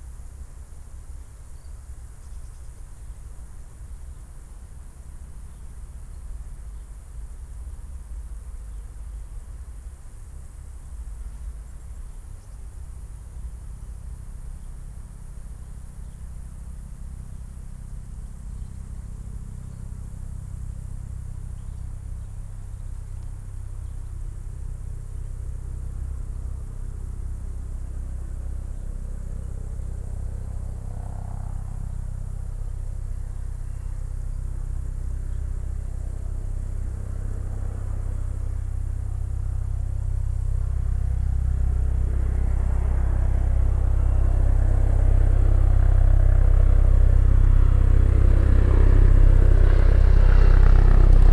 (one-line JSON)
{"title": "Tempelhofer Feld, Berlin, Deutschland - quiet summer morning with helicopter", "date": "2013-07-27 09:50:00", "description": "revisiting the poplar trees on former Tempelhof airfield. hot and quiet summer morning, crickets in the high grass, no wind, the slowly increasing deep drone of an approaching helicopter hits my microphones.\n(Sony PCM D50, DPA4060)", "latitude": "52.48", "longitude": "13.40", "altitude": "42", "timezone": "Europe/Berlin"}